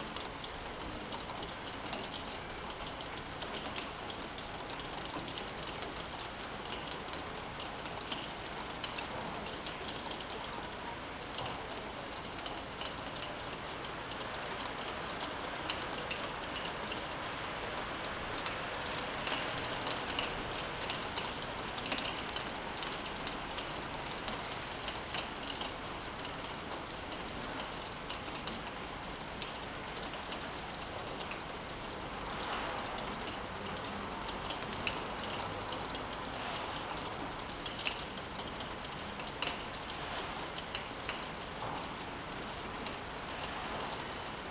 Vila de Gràcia, Barcelona, Spain - Rain 02
Rain recorded from window.
2 September 2015, ~10:00